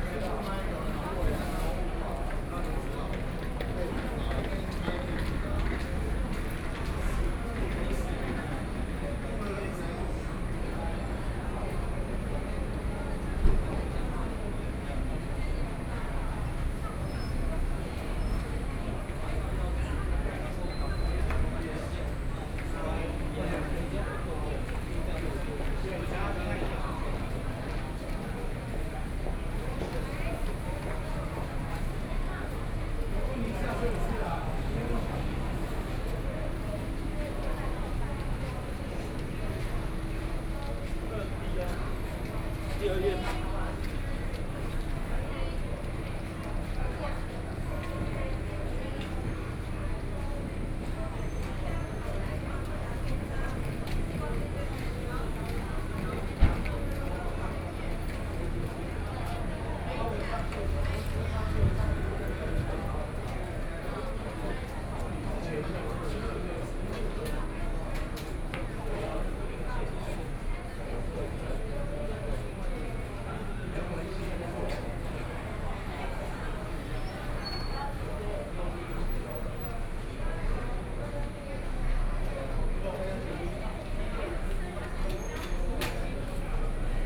At the station, at the exit, Tourists, Traffic Sound